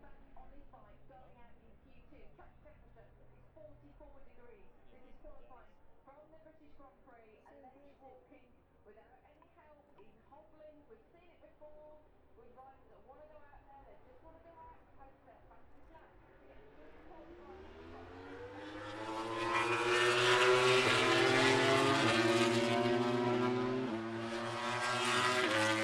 Silverstone Circuit, Towcester, UK - british motorcycle grand prix 2022 ... moto grand prix ...
british motorcycle grand prix 2022 ... moto grand prix qualifying two ... zoom h4n pro integral mics ... on mini tripod ...